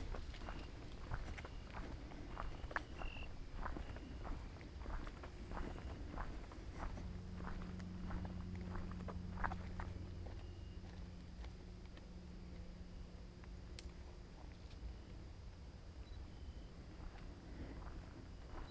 “Sunsetsound 2020, Levice” a soundwalk in four movements: September 5th & December 21st 2020. SCROLL DOWN FOR MORE INFOS - “Sunsetsound 2020, Levice” a soundwalk in four movements: second movement